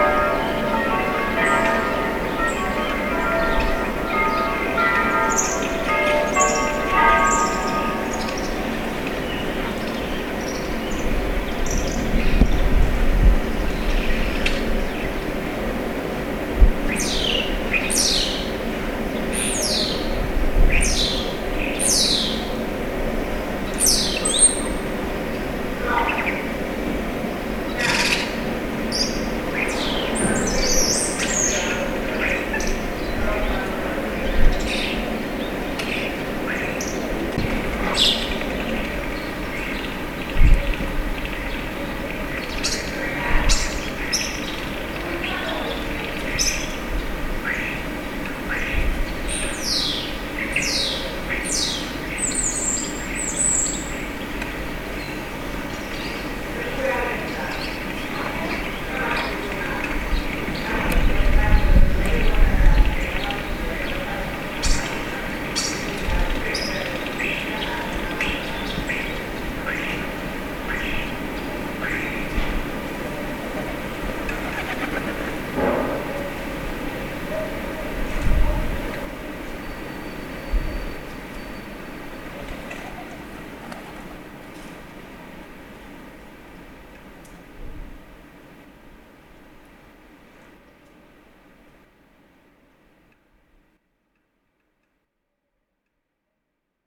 Alghero Sassari, Italy - Early Morning, Room 207
Recorded out the window of room 207 at the Hotel San Francesco.